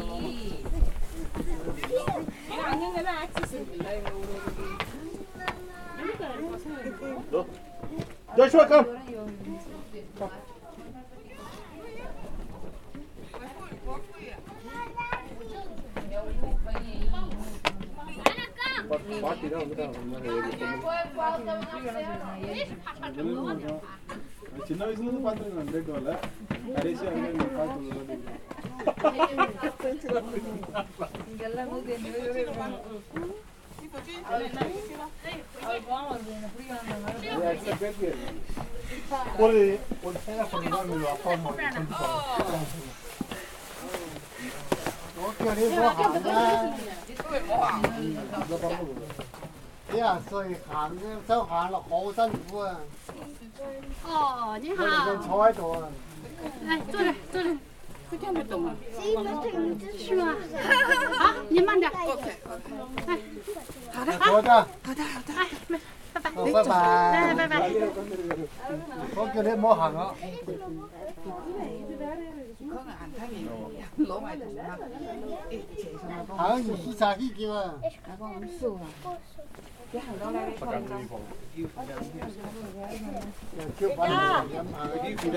Mutianyu, Great Wall, China
walking, great wall of China, people